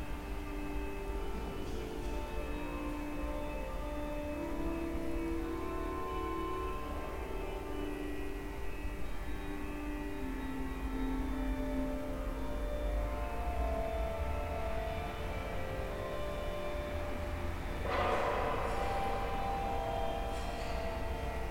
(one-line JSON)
{"title": "Avenue Gabriel Péri, Saint-Ouen, France - Église Notre-Dame du Rosaire", "date": "2019-01-25 09:10:00", "description": "An early morning meditation in the Église Notre-Dame du Rosaire, St Denis. I couldn't help but get distracted by the shifting intelligibility of voices moving in this vast, reverberant space (spaced pair of Sennheiser 8020s with SD MixPre6).", "latitude": "48.91", "longitude": "2.33", "altitude": "35", "timezone": "GMT+1"}